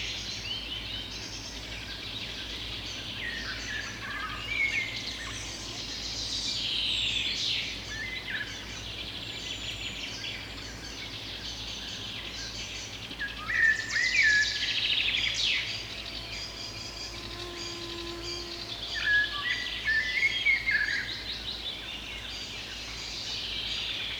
Скнятино, Тверская обл. - Sunrise on the Sknyatino Isle
Small island on the Volga river. Massive mosquitos attack. Birds singing contest. Frogs and reptiles moving in the grass.
Recorded with Tereza Mic System - Zoom F6
Тверская область, Центральный федеральный округ, Россия, 20 June 2021